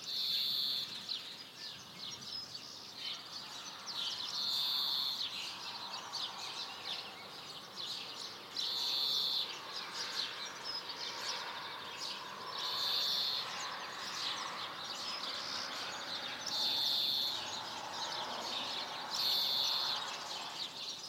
Urbanização Vila de Alva, Cantanhede, Portugal - Dawn Chorus, Cantanhede
Dawn chorus in Cantanhede, Portugal.